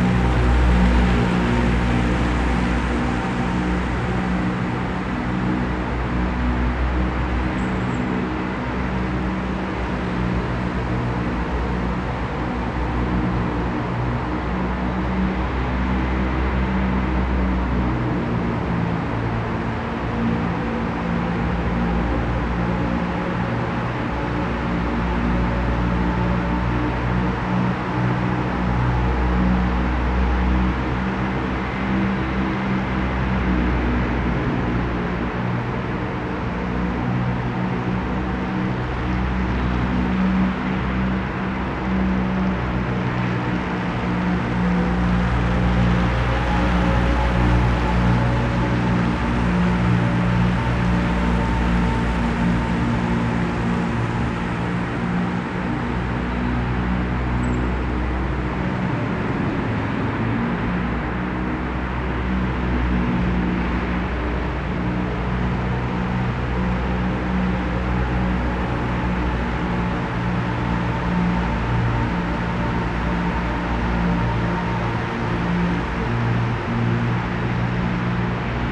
Düsseltal, Düsseldorf, Deutschland - Düsseldorf. Ice Stadium, Ice machine
Inside the old Ice Stadium of Duesseldorf. The sound of the ice machine driving on the ice cleaning the ice surface. In the background the street traffic from the nearby street.
This recording is part of the exhibition project - sonic states
soundmap nrw -topographic field recordings, social ambiences and art places